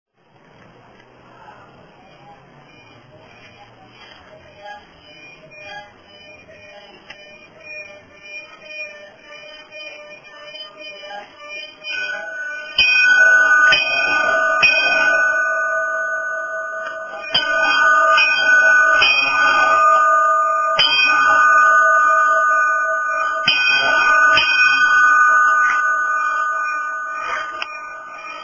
Passo Cereda (Trento) Italy
Campana della chiesetta di Santa Rita (Passo Cereda, Trento)
16 August, 23:48